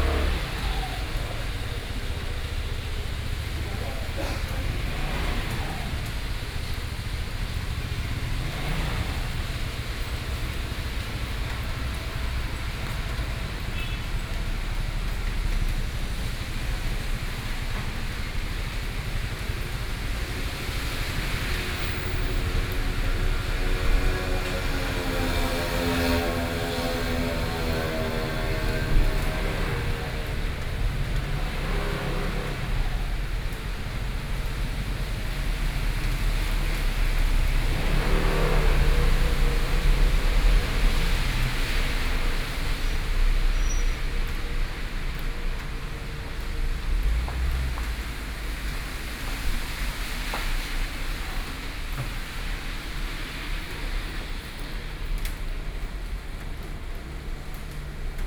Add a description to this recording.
Rainy Day, At the hospital gate, Between incoming and outgoing person, Vehicle sound, Binaural recordings, Zoom H4n+ Soundman OKM II